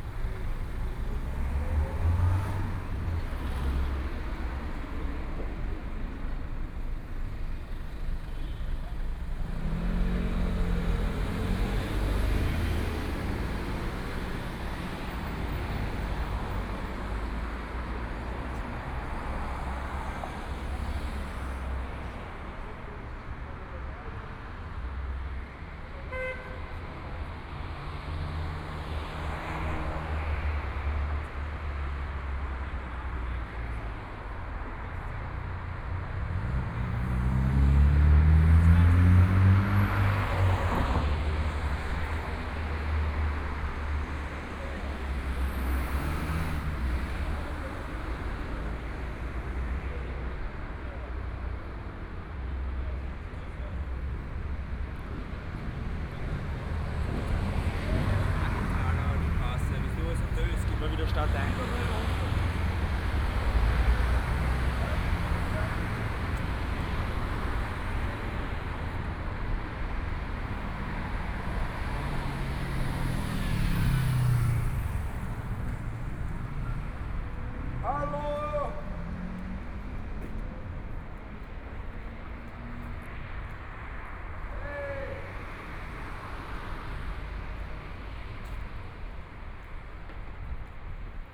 Schwanthalerstraße, Munich 德國 - walking in the Street
Walking the streets late at night, Traffic Sound